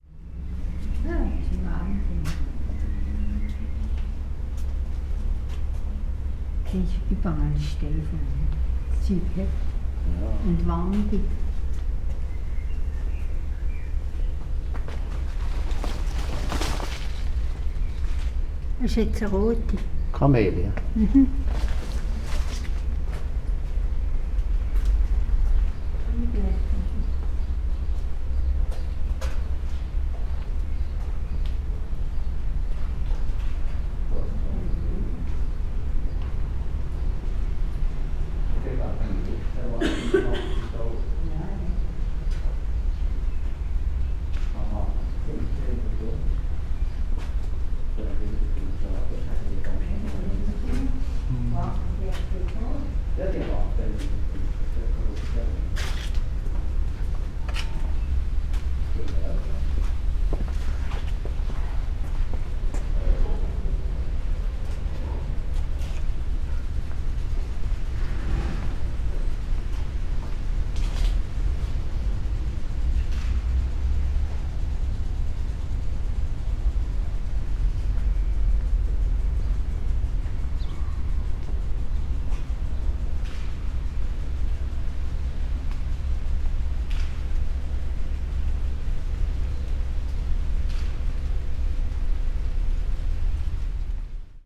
botanischer garten, Berlin, Germany - camellia
glasshouse, walk inside, spoken words